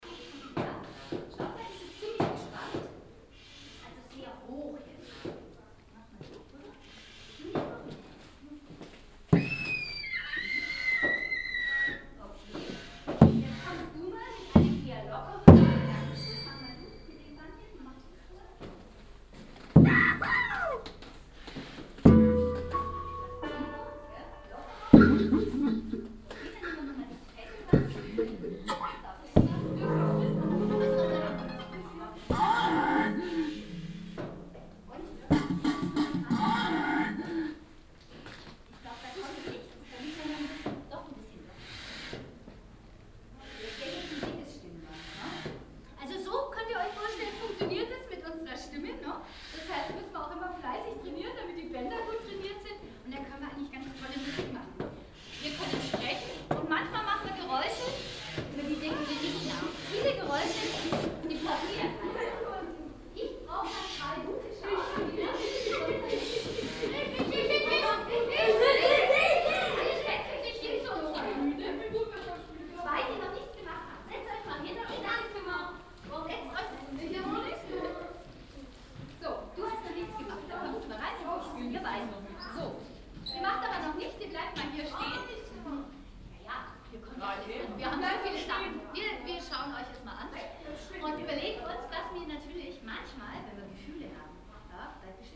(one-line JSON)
{"title": "stuttgart, old castle, landesmuseum", "date": "2010-06-20 14:35:00", "description": "inside the old castle museum - here an exhibition for kids\nsoundmap d - social ambiences and topographic field recordings", "latitude": "48.78", "longitude": "9.18", "altitude": "252", "timezone": "Europe/Berlin"}